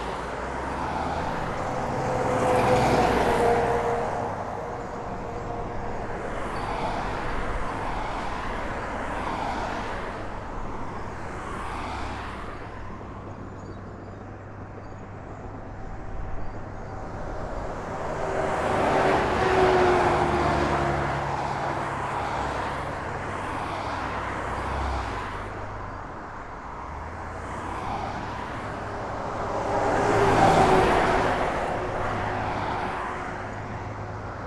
Beograd, Serbia - ambiance from the access road to freeway near belgrade
klaxon 00:01:48,00000000
grincements remorques travaux ? 00:01:56,00000000
instant calme 00:04:38,44379167
passage engin de chantier 00:06:20,00000000